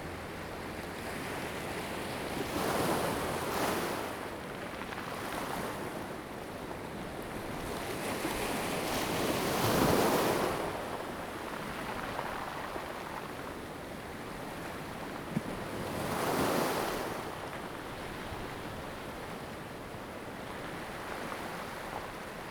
椰油村, Koto island - sound of the waves
sound of the waves
Zoom H2n MS +XY
Taitung County, Taiwan